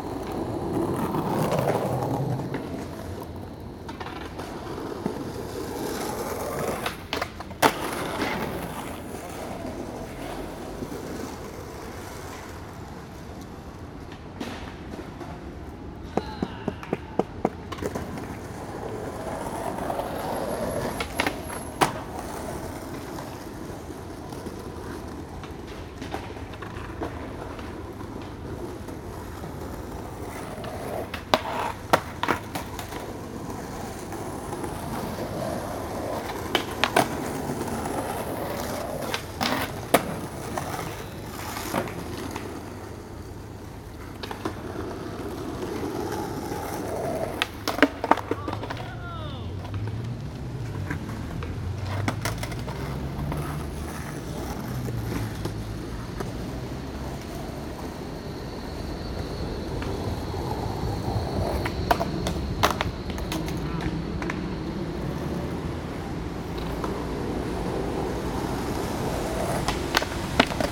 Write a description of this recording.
skaters practicing tricks on the pavement outside Rewe on Warschauerstr near Revalerstr, recorded with a Tascam Dr-100 mk3, wind protection, uni mic.